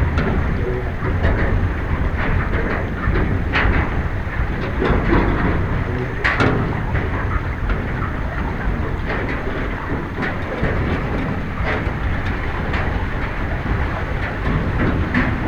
Most Sobieszewski, Gdańsk, Poland - Sobieszewo most hydrofon
Nagranie hydrofonowe mostu pontonowego.
5 August 2018, ~13:00